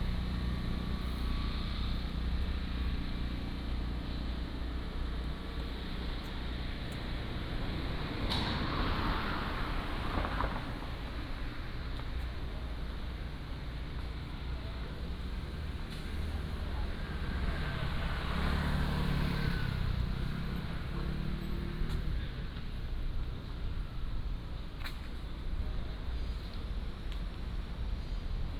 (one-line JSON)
{"title": "Section, Heping East Road, Taipei City - Walking on the road", "date": "2015-06-04 15:19:00", "description": "Traffic Sound, Walking on the road", "latitude": "25.03", "longitude": "121.55", "altitude": "29", "timezone": "Asia/Taipei"}